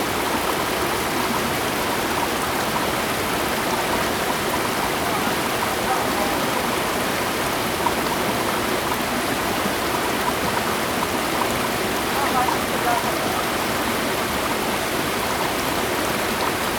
猴洞坑溪, 礁溪鄉白雲村 - stream
stream, waterfall
Zoom H2n MS+ XY
Yilan County, Taiwan, 7 December 2016